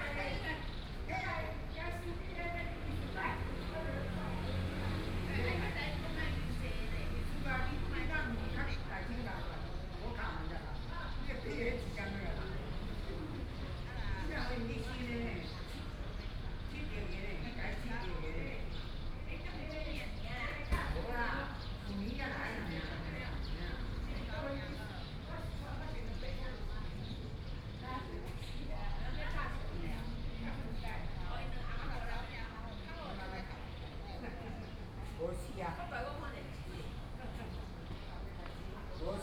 XinLu Park, Taipei City - in the Park
in the Park, Birds singing, Group of woman chatting
Sony PCM D50+ Soundman OKM II
Zhongshan District, Taipei City, Taiwan